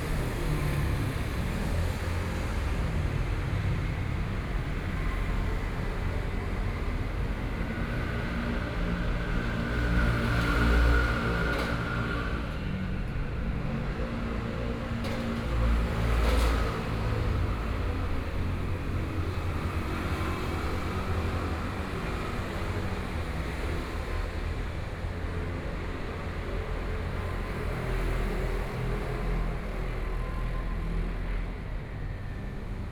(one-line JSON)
{"title": "Zhongyuan bridge, Jungli City - Traffic Noise", "date": "2013-09-16 14:24:00", "description": "Traffic Noise, Factory noise, Train traveling through, Sony PCM D50+ Soundman OKM II", "latitude": "24.96", "longitude": "121.23", "altitude": "138", "timezone": "Asia/Taipei"}